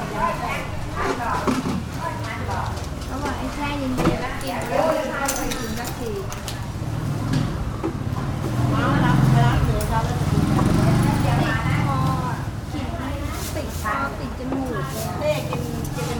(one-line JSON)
{"title": "Cooking Khao Pat", "date": "2010-07-18 02:39:00", "description": "WLD, Bangkok, Thailand, cooking, market, food", "latitude": "13.72", "longitude": "100.57", "altitude": "9", "timezone": "Asia/Bangkok"}